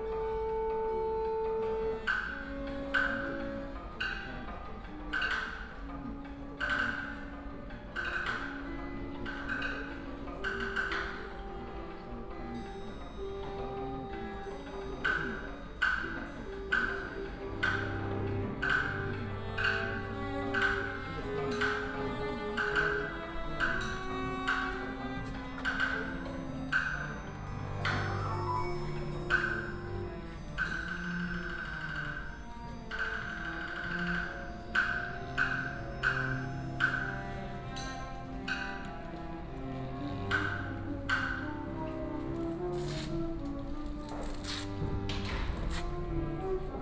臺灣戲曲中心, Taipei City, Taiwan - before the performance
The instrument was tuned before the performance